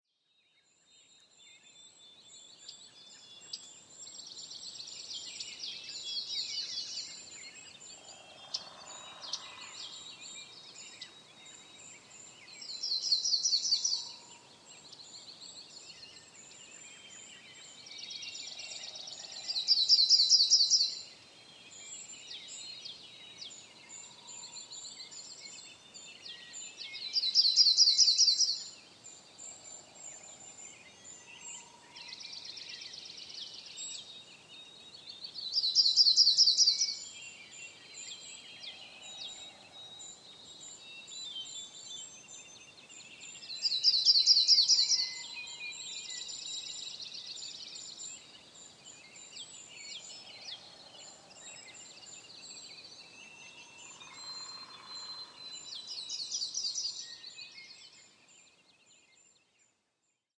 Pre Dawn Perch Lake
Pre Dawn Morning Chorus recording, May 2nd 2010, Length 1 minute (sample) Fostex FR2LE Rode NT4 Mic with blimp.